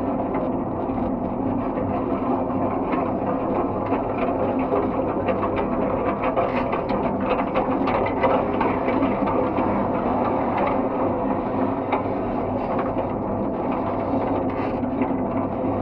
MSP Terminal 1 Concourse A-Lindbergh, Saint Paul, MN, USA - Baggage Claim
Using JrF contact mics taped to the side of the carousel. Recorded to Sound Devices 633.
St Paul, MN, USA, 5 October 2018, 22:45